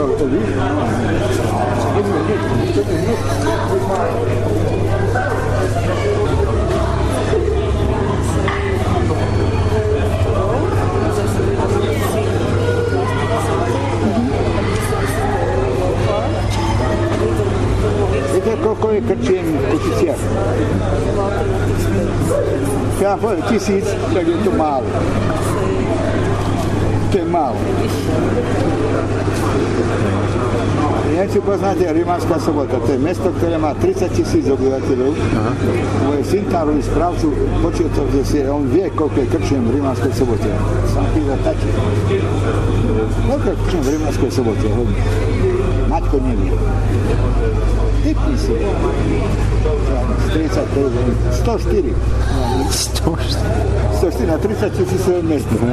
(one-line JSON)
{"title": "kosice, furca (dargovskych hrdinov)", "date": "2010-08-26 19:35:00", "description": "man explaining his theory why there must be at least 1000 pubs (krcmy) in kosice and wondering how all of 'them' survive", "latitude": "48.74", "longitude": "21.28", "timezone": "Europe/Kiev"}